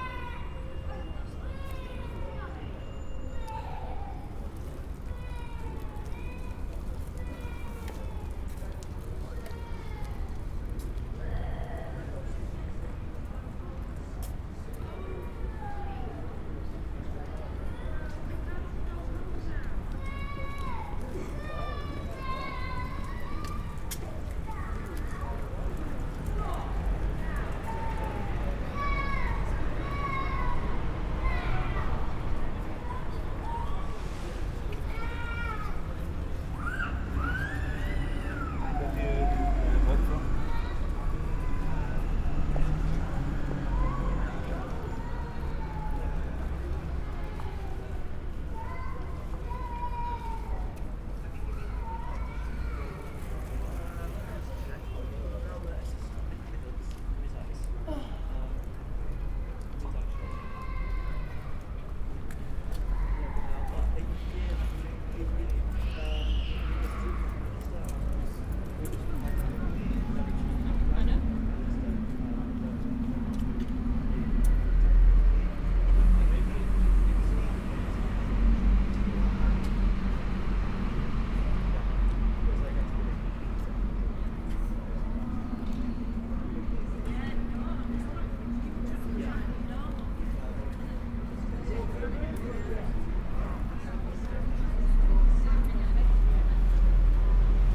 Town Hall, Reading, UK - The clock strikes six
This is the sound of the Town Hall Clock striking six. I was walking home after a day of recording in Oxford, and I noticed that there was a little time to set up microphones ahead of the hour striking. I attached two omni-directional microphones to a bicycle frame with velcro, and settled in to listen to my town. This area is pedestrianised, but there is a fairly large bus route passing through to the side of it... so you can hear the buses and taxis, but lots of lovely bikes as well, and people walking, and the festive feeling and laughter at the end of the working day in the town, in summer.